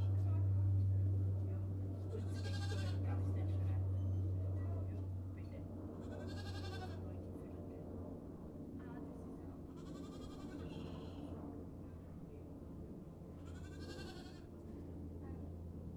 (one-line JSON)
{"title": "Gemeinde Lannach, Österreich - daly morning on a farm", "date": "2015-12-17 06:49:00", "description": "Morning on a farm\nOpen roll-up curtain\nBoat braying\ngrandma talking", "latitude": "46.96", "longitude": "15.31", "altitude": "357", "timezone": "Europe/Vienna"}